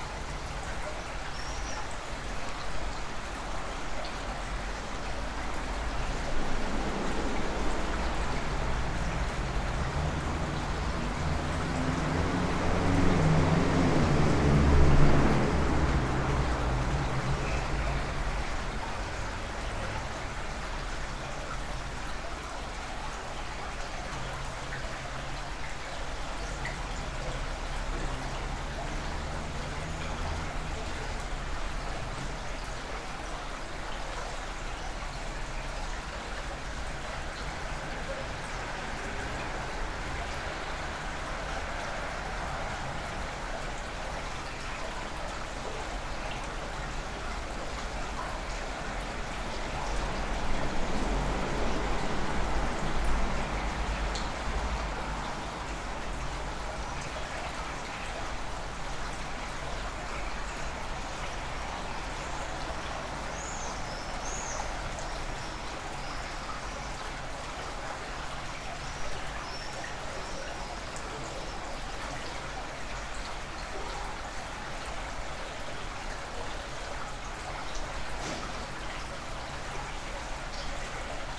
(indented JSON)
{
  "title": "Utena, Lithuania, underbridge study in two parts",
  "date": "2018-07-19 19:40:00",
  "description": "investigating my little town's bridges. the recording is two aural parts mix. the first part - audible evening soundscape under the bridge. the second part - probably fictitious aural scape received from normally unaudible sources with the help of contact microphones anf electromagnetic antenna",
  "latitude": "55.50",
  "longitude": "25.60",
  "altitude": "106",
  "timezone": "Europe/Vilnius"
}